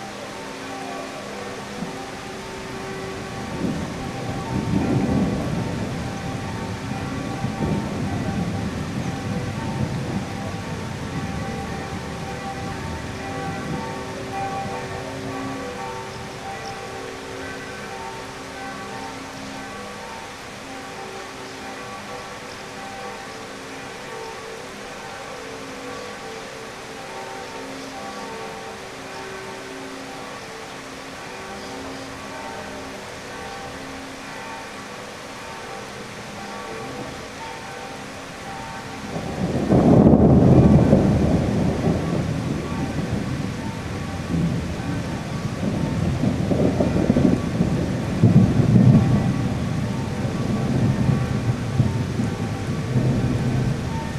Český Krumlov, Tschechische Republik - Soundscape Atelier Egon Schiele Art Centrum (1)
Soundscape Atelier Egon Schiele Art Centrum (1), Široká 71, 38101 Český Krumlov